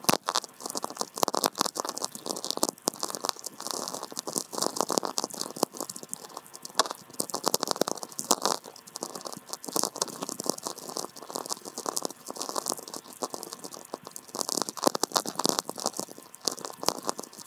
Pakalniai, Lithuania, atmospheric VLF
standing with VLF receiver on the ancient mound. distant lightnings....